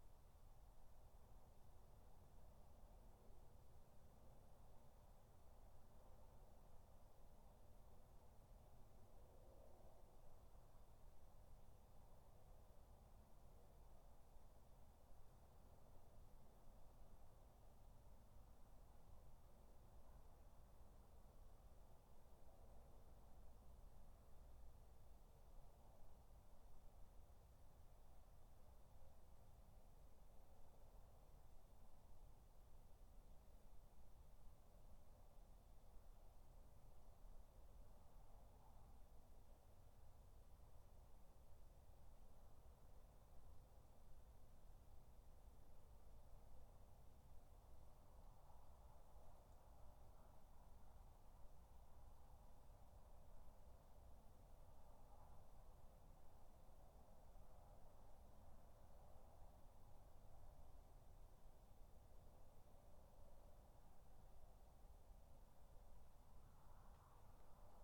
Dorridge, West Midlands, UK - Garden 2
3 minute recording of my back garden recorded on a Yamaha Pocketrak
Solihull, UK